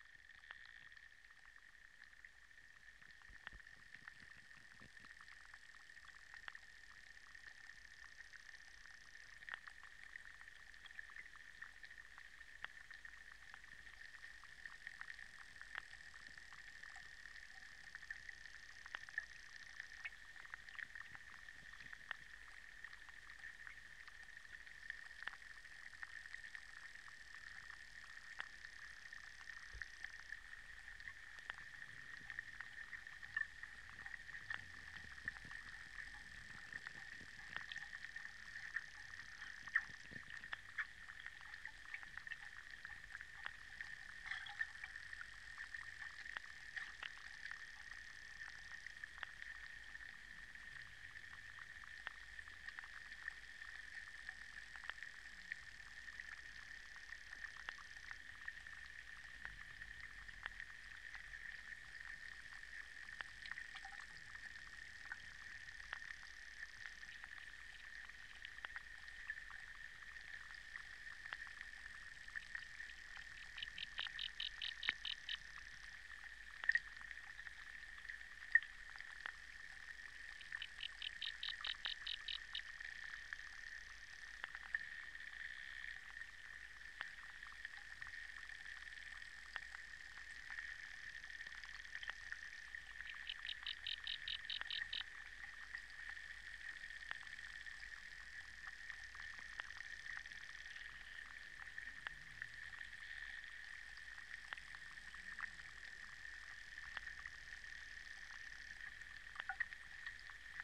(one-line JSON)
{"title": "Vyzuoneles. Lithuania, underwater activity", "date": "2016-07-26 15:10:00", "description": "underwater activity in a pond just right after rain", "latitude": "55.53", "longitude": "25.55", "altitude": "92", "timezone": "Europe/Vilnius"}